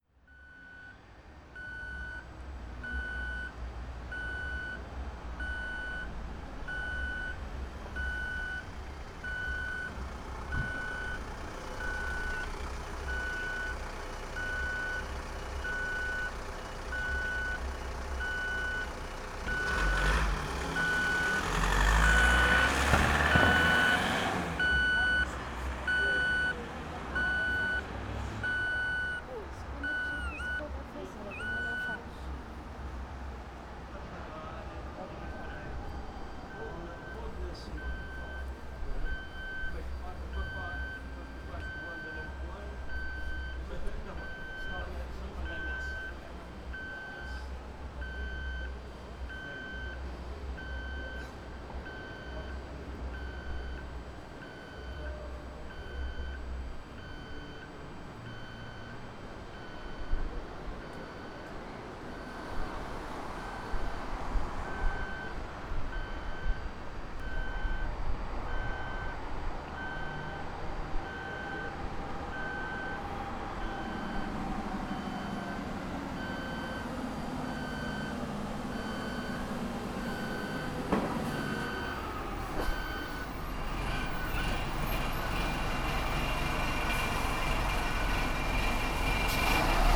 {"title": "Funchal, Rua Do Visconde De Anadia - crosswalk lights", "date": "2015-05-04 23:36:00", "description": "walking around the intersection and chasing intertwining streetlight sound signals.", "latitude": "32.65", "longitude": "-16.90", "altitude": "24", "timezone": "Atlantic/Madeira"}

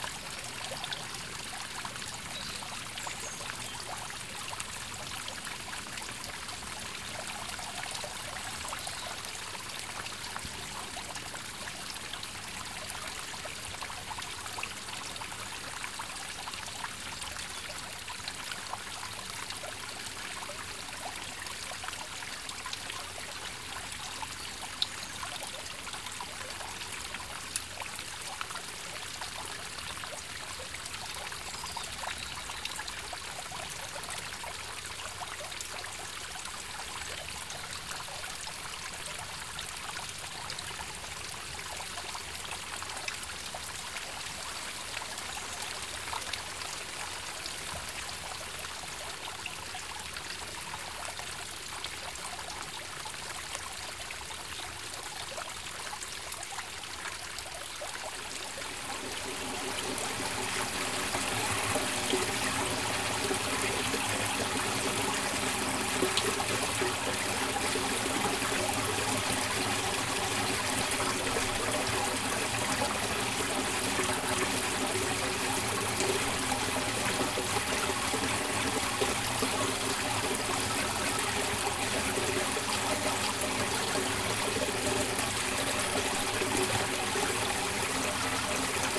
{"title": "Marknesse, Nederland - Soundscape of a hydrological laboratory.", "date": "2021-10-18 08:33:00", "description": "Recording made at the hydrological laboratory\nBackground:\nWater is very important for living organisms, but it can also pose a threat, such as the rise in sea level due to global warming.\nFor centuries now, there has been a special relationship between the Dutch and the water. The polders that have been reclaimed from the sea are world famous, but the storm surge barriers are at least as extraordinary. The Delta Works and Afsluitdijk, for instance, which the Dutch built to protect them from the water. They built Holland as we know it today with great knowledge and perseverance. As a result, Holland is internationally renowned as the world’s laboratory in terms of water management.\nTrial garden\nTesting was indispensable to obtain the required knowledge. The Waterloopkundig Laboratorium, a hydrological laboratory, was established in Noordoostpolder after WWII, in the pre-computer age.", "latitude": "52.67", "longitude": "5.91", "altitude": "2", "timezone": "Europe/Amsterdam"}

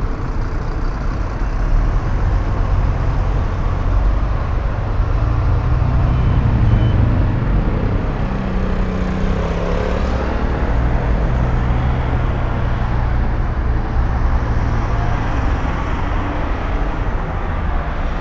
{"title": "Quai du Point du Jour, Paris, France - In front of TF1", "date": "2016-09-22 17:30:00", "description": "In front of the worst TV of the world ; a pilgrimage for us ! Enormous traffic noises.", "latitude": "48.83", "longitude": "2.26", "altitude": "30", "timezone": "Europe/Paris"}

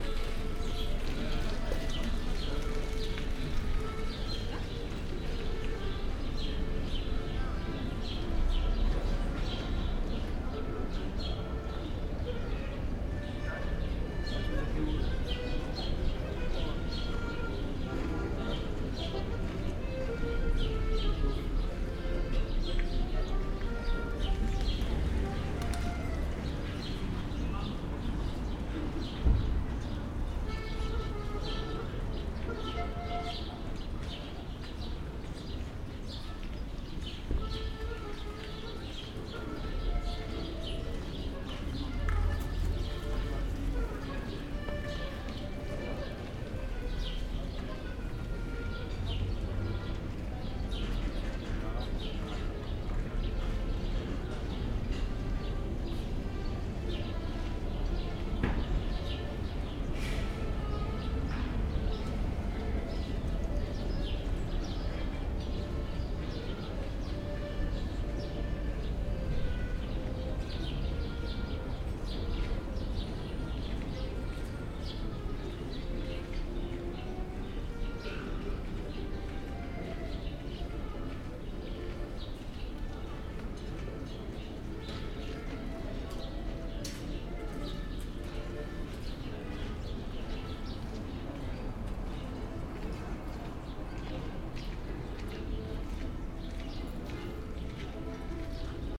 {"title": "Brauhausgasse, Weimar, Germany - Social ambience Weimar", "date": "2020-08-09 16:26:00", "description": "Musik, footsteps, inaudible conversations, birds and engine hums at a public space usually set for dinning in the open on summer days. Once a while, summer music events are held in the open. Goethe`s residence is located near. The periphery \"Frauenplan\" attracts large numbers of tourists all season.\nRecording gear: Zoom F4, LOM MikroUsi Pro XLR version, Beyerdynamic DT 770 PRO/DT 1990 PRO.", "latitude": "50.98", "longitude": "11.33", "altitude": "224", "timezone": "Europe/Berlin"}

